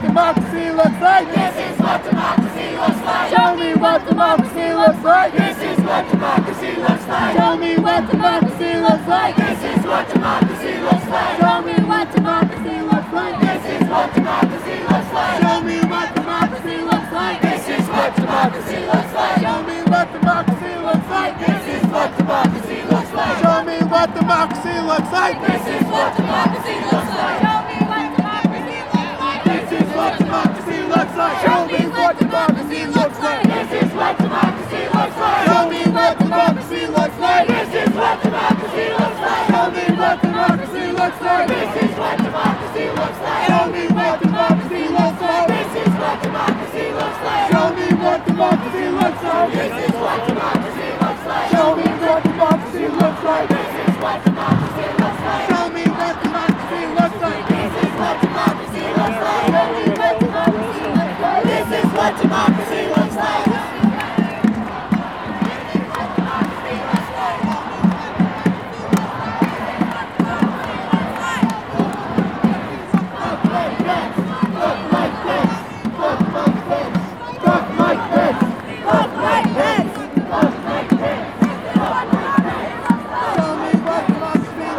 Midtown, New York, NY, USA - Anti-Trump protests near Trump Tower
Anti-Trump Protests in 5th Avenue next to Trump Tower.
Zoom H4n